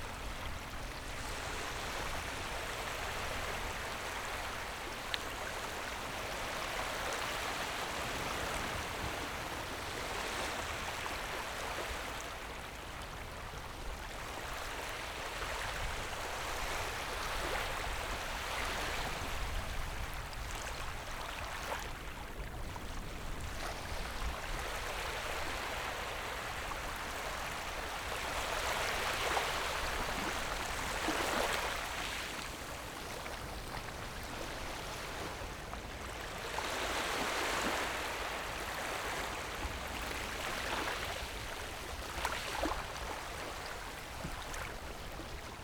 后沃海濱公園, Beigan Township - Waves and tides
Sound of the waves, Small beach, Tide
Zoom H6 +Rode NT4